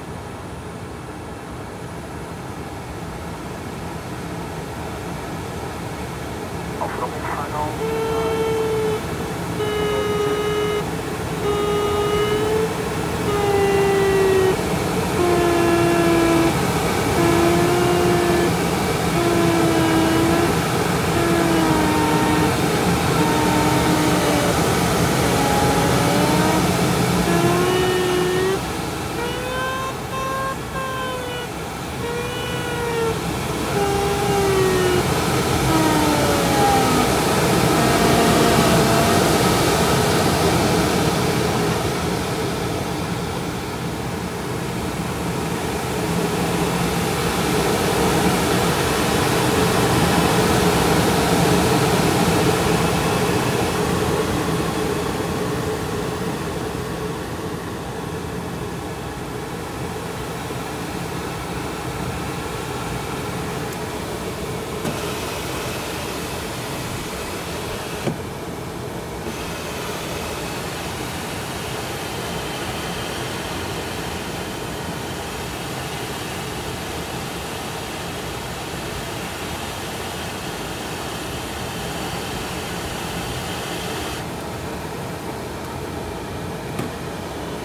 Hasselt, Belgium - gliding flight
recording of a gliding flight around kievit airport (Hasselt) in aircraft Twin Astir II. Recorded with zoom H5 This recording has been edited to a 15min. piece.
6 May 2017, 14:26